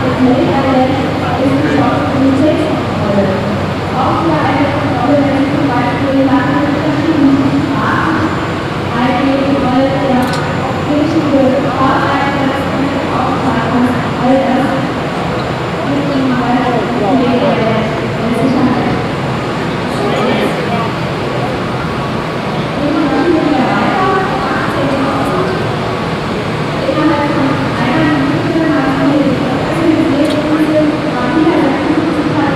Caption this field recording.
Inside hall 16 of the Düsseldorf trade fair during the DRUPA. Soundwalk through the hall recording the sound of a product presentation in the overall fair ambience with interantional visitors. soundmap nrw - social ambiences and topographic field recordings